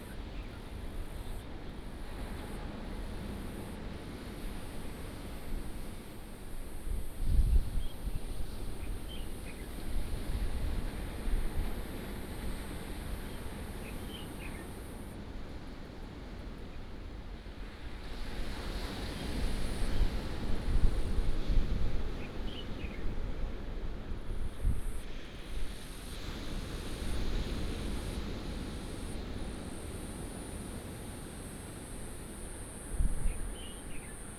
八瑤灣, Manzhou Township - On the coast
On the coast, Bird sound, Sound of the waves, Traffic sound
Manzhou Township, Pingtung County, Taiwan, 23 April, 11:01